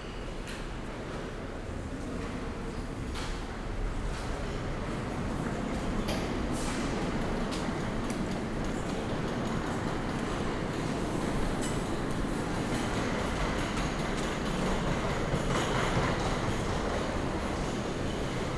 short walk through Oldenburg main station, starting at the main entrance hall
(Sony PCM D50, DPA4060)